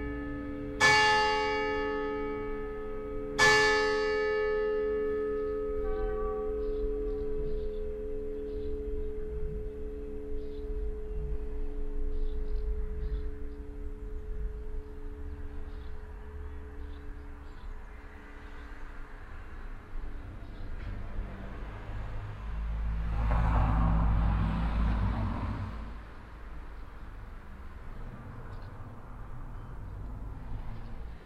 In the evening at the Saint-Willibrord church of Wilwerwiltz. In the distance the salarm signal of the closing train way gate, then an approaching train. Then the 19.00 church bells finished by the distant signal horn of the train, a car passing by another train arriving and a boy walking along whistling.
Wilwerwiltz, Kirche, Glocken
Abends bei der Sankt-Willibrord-Kirche in Wilwerwiltz. In der Ferne das Warnsignal der sich schließenden Bahnschranke, dann ein sich nähernder Zug. Dann um 19 Uhr die Kirchenglocken, schließlich das ferne Signal des Zuges. Ein Auto fährt vorbei, ein weiterer Zug kommt an und ein Junge spaziert pfeifend vorbei.
Die Kirche ist Teil des regionalen Kiischpelter Pfarrverbands.
Wilwerwiltz, église, cloches
Le soir à l’église Saint-Willibrord de Wilwerwiltz. On entend dans le lointain le signal d’alerte d’un passage à niveau qui se ferme puis le train qui approche.
4 August, ~14:00